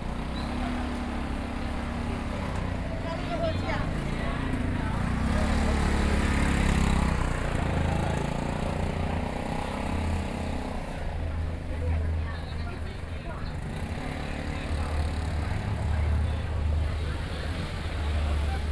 Walking through the traditional market, Traffic Sound
Sony PCM D50+ Soundman OKM II